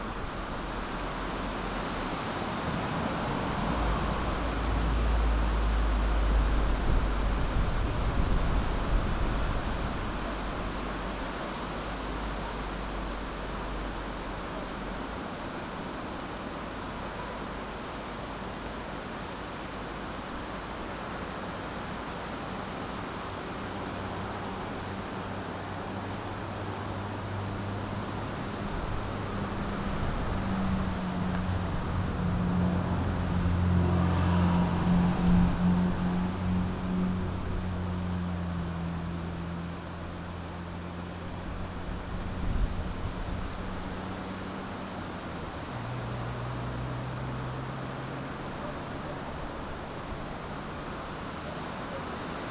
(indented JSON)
{
  "title": "quattropani - wind",
  "date": "2009-10-23 14:05:00",
  "description": "wind in trees near little village quattropani, lipari island.",
  "latitude": "38.51",
  "longitude": "14.92",
  "altitude": "348",
  "timezone": "Europe/Berlin"
}